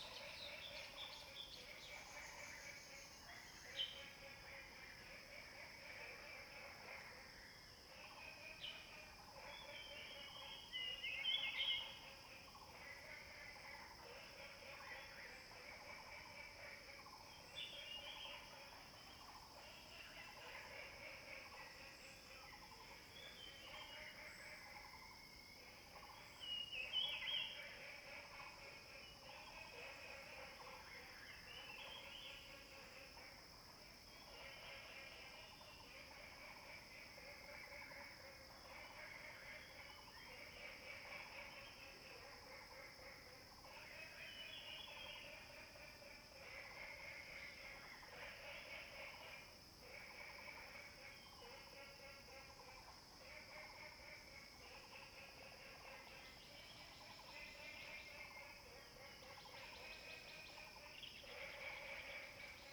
蓮華池藥用植物標本園, 魚池鄉五城村 - wetlands
birds sounds, wetlands, Frogs chirping
Zoom H2n MS+XY